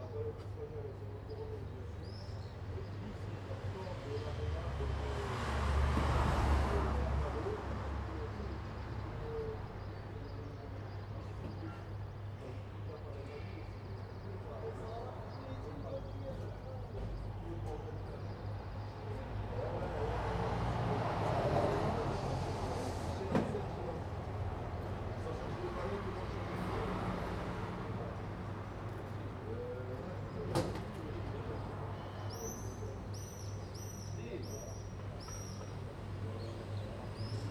Impasse Guidotti, Nice, France - morning traffic & birds
The morning traffic and birds. The speech and thuds you can hear come from men setting up the vegetable stall across the road.